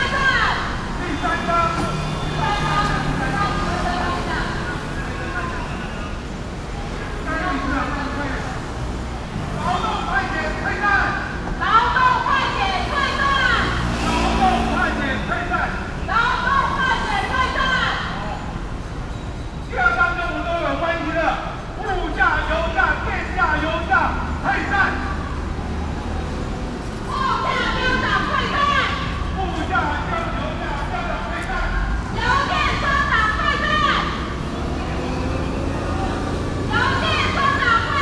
Zhongshan S. Rd., Taipei City - Protests
Labor protest, Sony PCM D50 + Soundman OKM II
中正區 (Zhongzheng), 台北市 (Taipei City), 中華民國, 1 May 2012